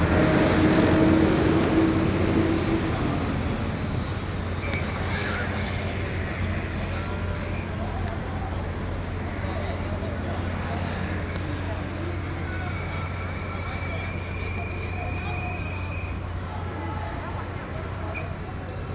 Taipei, Taiwan - Taiwan LGBT Pride
Zhongzheng District, Taipei City, Taiwan, 27 October